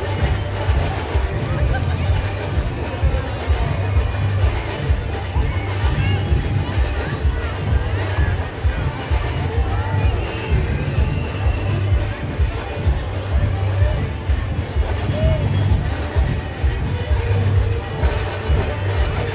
Bridge St, Belfast, UK - Belfast St Patrick's Day
After two years without any St. Patrick's Day parades, the city of Belfast decided to organise a large parade starting from Belfast City Hall and moving through the city's inner streets. On a rainy afternoon, I recorded within the crowd to capture some of the bands, floats, cheers, chatter, and the continuous rain that fell on us. This is a recording of the parade march, parade bands, music, instruments, chanting, yelling, whistles, groups, children, adults, celebrations, chatter, and gatherings.
Ulster, Northern Ireland, United Kingdom